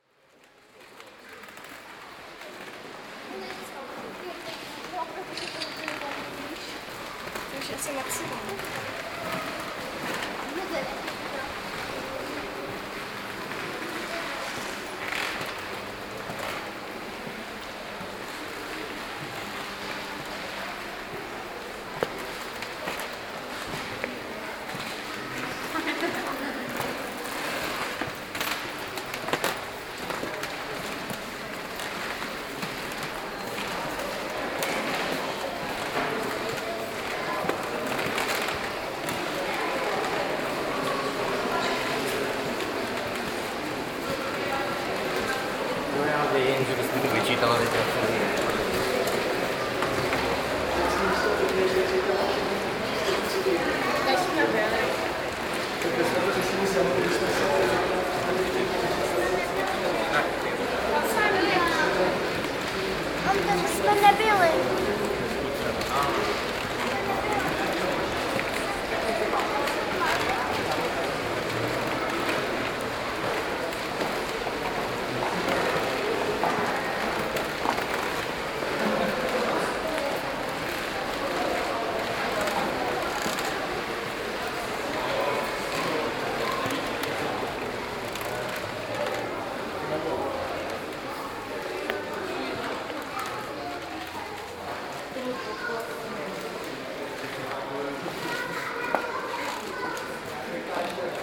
last day of the exhibitions in the old building of the national museum before 5 years of closing due to the general reconstruction